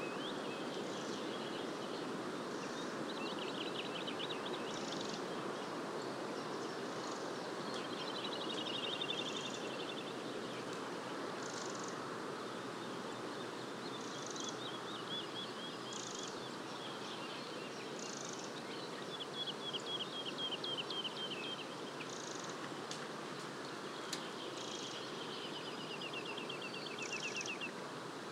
Kobjergvej 7A, 7190 Billund, Denmark, outskirts
calm morning in the outskirts
Danmark, 3 April 2022, 09:15